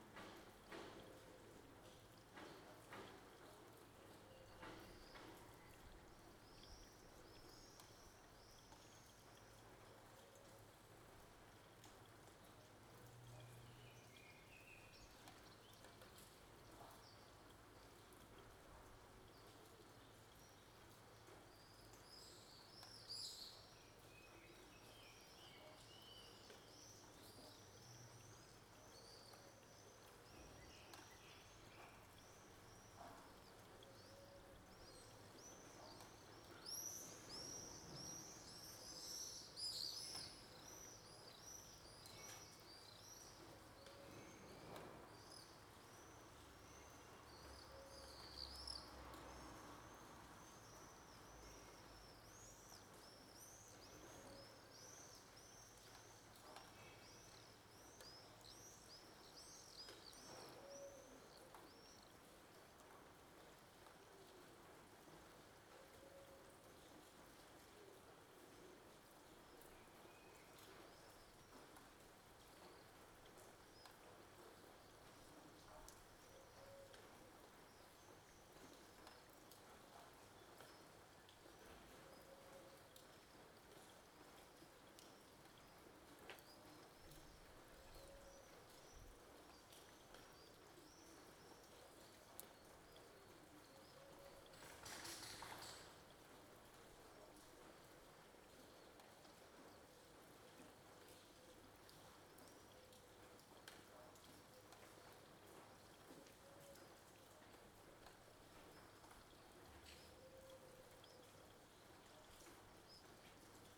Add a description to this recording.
Raw field recording made from an open window. The sound of the rain, birds, neighbours, and also sounds from the interior of the house. Recorded using a Zoom H2n placed on the ledge of the window.